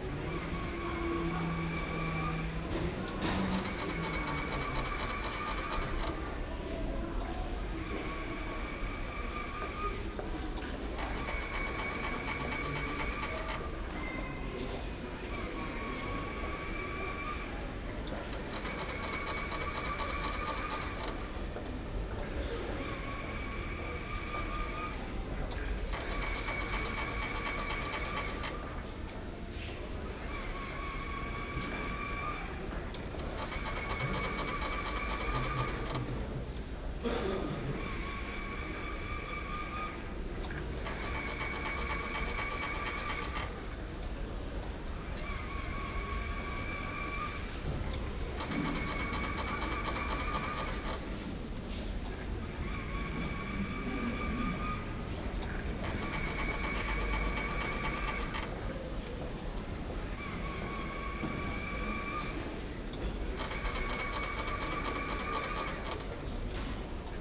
U8 Hermannplatz - rotierende Werbung
21.10.2008 15:30: rotierende Werbeplakate im U-Bahnhof Hermannplatz.
advertisement posters rotating
Berlin, Deutschland